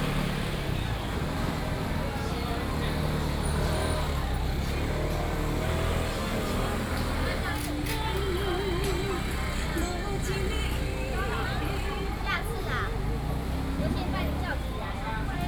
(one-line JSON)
{"title": "Ln., Zhongshan Rd., Tamsui Dist., New Taipei City - Walking in the traditional market", "date": "2015-07-21 10:50:00", "description": "Walking through the traditional market", "latitude": "25.17", "longitude": "121.44", "altitude": "19", "timezone": "Asia/Taipei"}